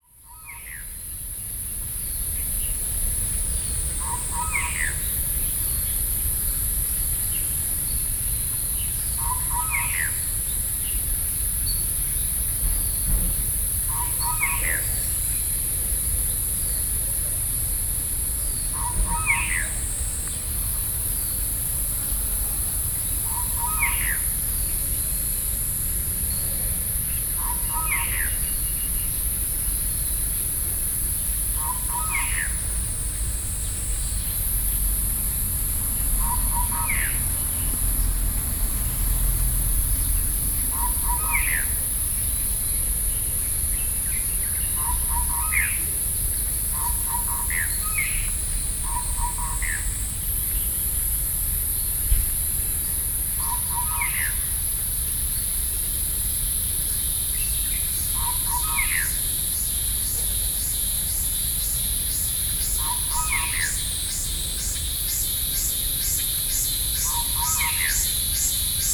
Beitou, Taipei - Morning
Morning in the park, Sony PCM D50 + Soundman OKM II